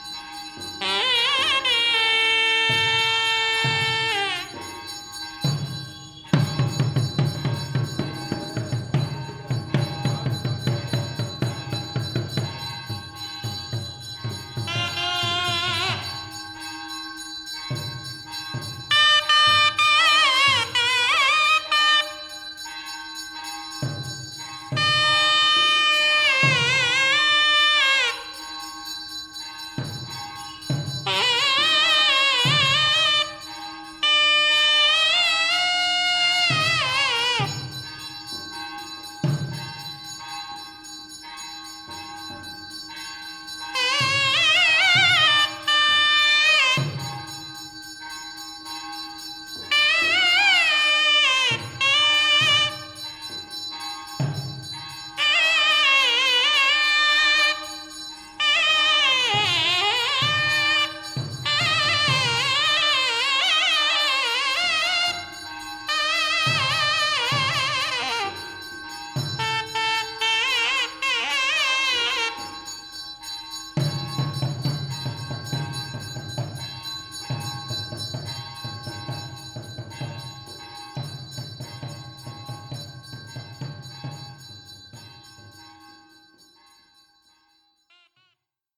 Dr Ambedkar Rd, MG Road Area, Puducherry, Inde - Pondicherry - Shri Kaushika Balasubramanya Swamy Murugan Temple
Shri Kaushika Balasubramanya Swamy Murugan Temple
Cérémonie